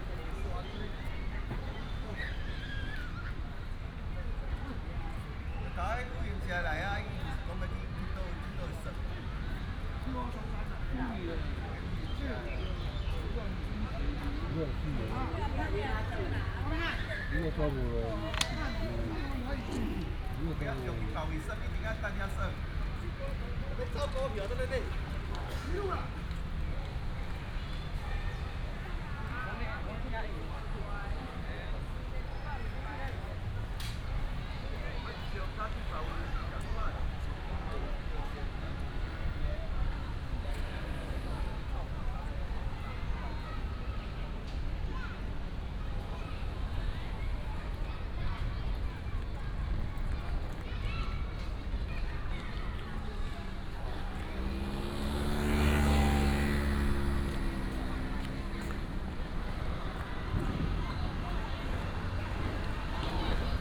仁愛兒童公園, New Taipei City - in the Park
in the Park, Traffic sound, Child, sound of the birds, A group of old people are playing chess